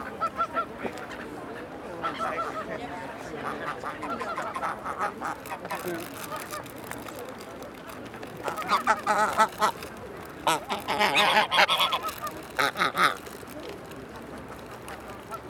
London, UK, St James Park - Chattering Geese
Went to do some test recordings for a project regarding geese, thought this was a nice interaction. The geese were expecting to be fed, instead were faced with a microphone. They came pretty close up and were basically were honking into the mic.